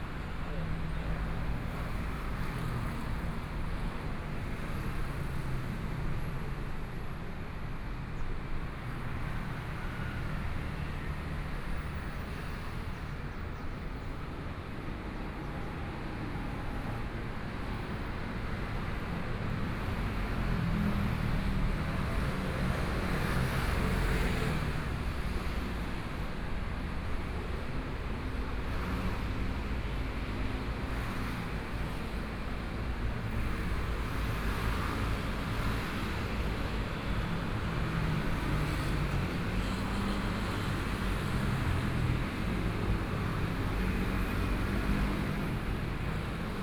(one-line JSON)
{"title": "Xinsheng N. Rd., Zhongshan Dist. - in the Street", "date": "2014-01-20 14:21:00", "description": "walking in the Xinsheng N. Rd., Traffic Sound, Construction Sound, Next to the school, Binaural recordings, Zoom H4n+ Soundman OKM II", "latitude": "25.06", "longitude": "121.53", "timezone": "Asia/Taipei"}